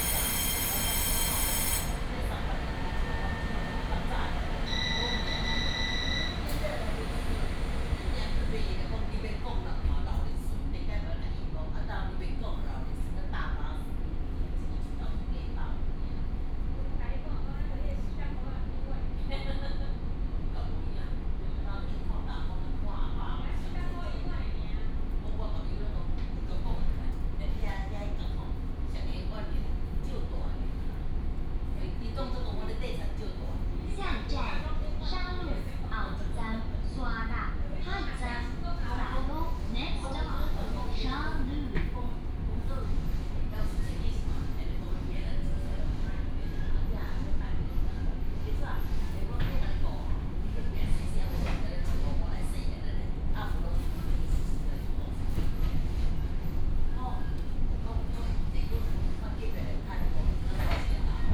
Longjing District, Taichung City - In the compartment
Coastal Line (TRA), from Longjing Station station to Shalu Station